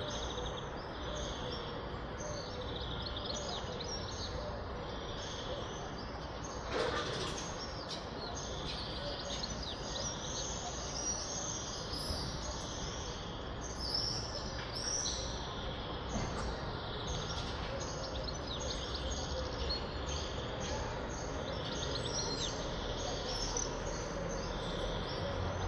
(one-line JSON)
{"title": "вулиця Трудова, Костянтинівка, Донецька область, Украина - Майское утро", "date": "2019-05-14 06:17:00", "description": "Утро в спальном районе: голоса ранних прохожих, щебет птиц и звуки автомобилей", "latitude": "48.54", "longitude": "37.69", "altitude": "104", "timezone": "GMT+1"}